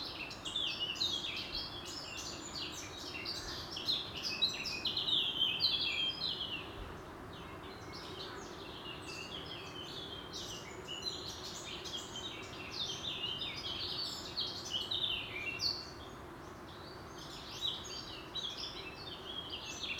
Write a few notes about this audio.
Necessidades Garden, kids, church bells, background traffic (Tagus river bridge)